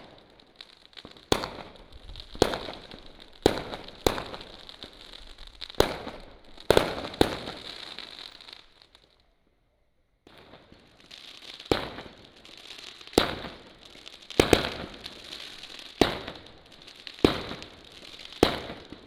Fanshucuo, Shuilin Township - Fireworks and firecrackers
Fireworks and firecrackers
Shuilin Township, 雲151鄉道, 29 January 2017